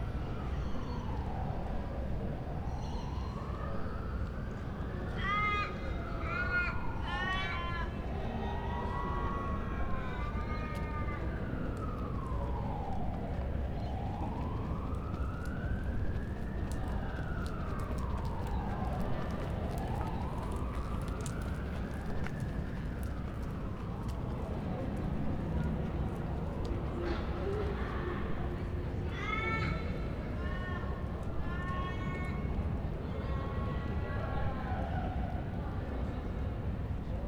neoscenes: uni quad with ravens